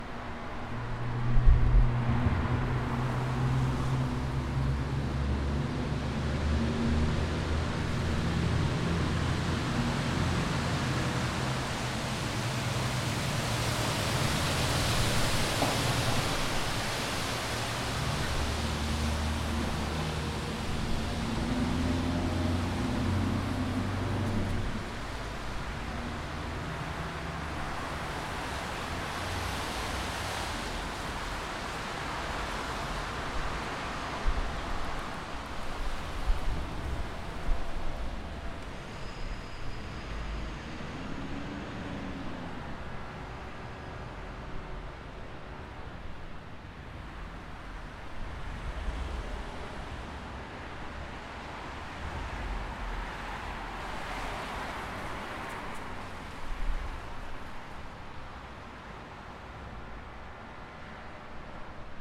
Coenhavenweg, Amsterdam, Nederland - Wasted Sound De Ring
The wasted sound of the Amsterdam ring road.
6 November 2019, 13:29